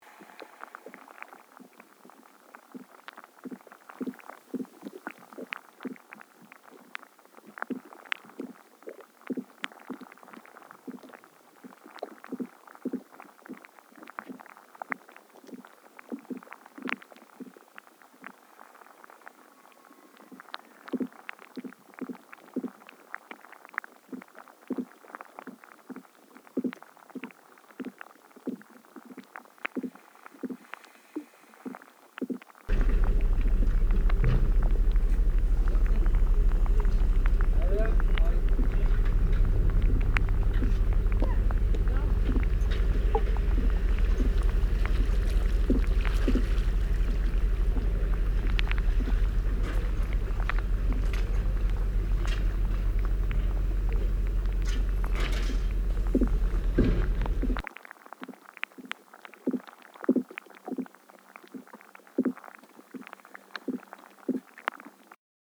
Kanaleneiland Utrecht, The Netherlands - hydro + ambience
hydrophones & stereo microphone